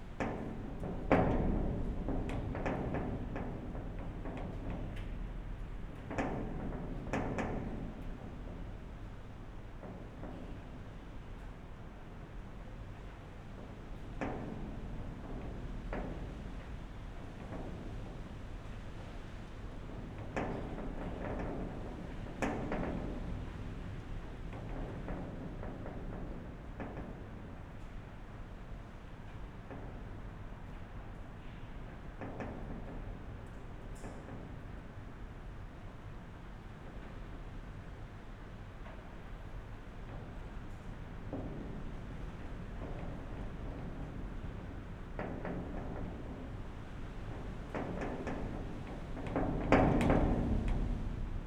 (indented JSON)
{
  "title": "Punto Franco Nord, Trieste, Italy - wind moves iron gate",
  "date": "2013-09-11 15:30:00",
  "description": "Trieste, punto Franco Nord, abandoned former stables building, wind is moving the iron gate, heard inside the building.\n(SD702, AT BP4025)",
  "latitude": "45.67",
  "longitude": "13.76",
  "altitude": "3",
  "timezone": "Europe/Rome"
}